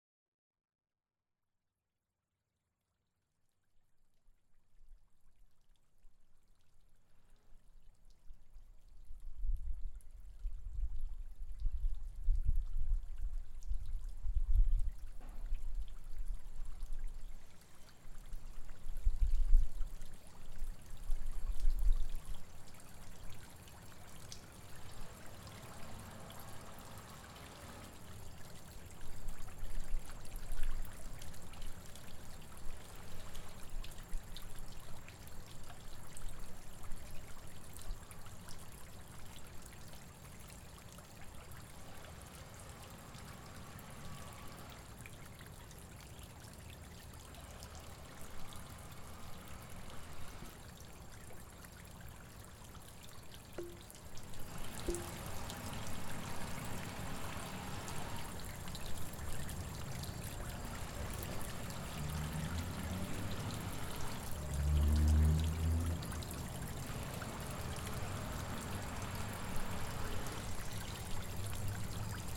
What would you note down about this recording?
A recording made of a fountain trickling water. Recorded with a Zoom H4n.